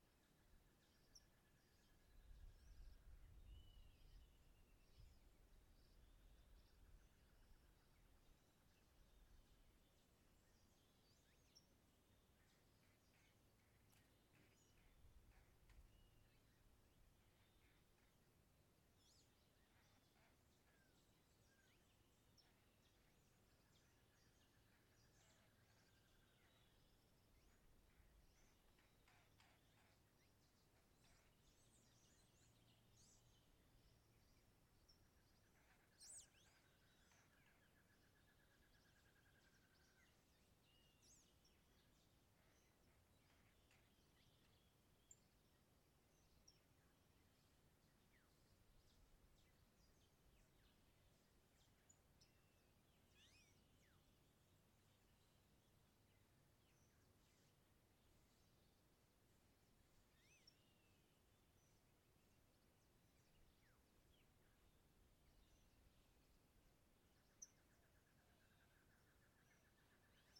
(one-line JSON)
{"title": "Apulo, Cundinamarca, Colombia - Singing Birds", "date": "2013-01-03 06:15:00", "description": "Bird songs during the sunrising. Zoom H2N in XY function at ground level. The recording was taken on Apulo's rural area.", "latitude": "4.52", "longitude": "-74.58", "timezone": "America/Bogota"}